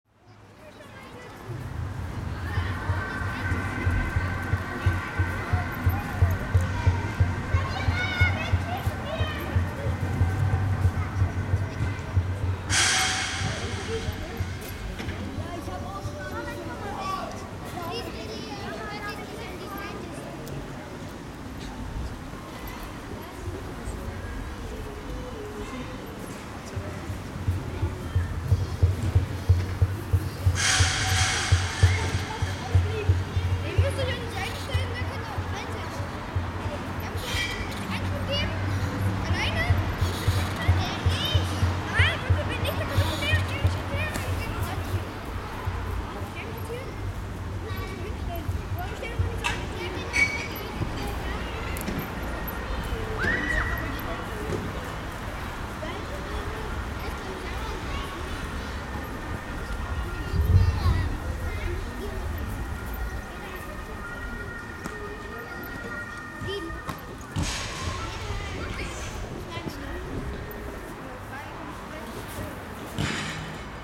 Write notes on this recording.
kinderstimmen, geräusche vom bolzplatz, verkehr in der ferne. und irgendwas mysteriöses klopft da, keiner wusste, wo das herkommt...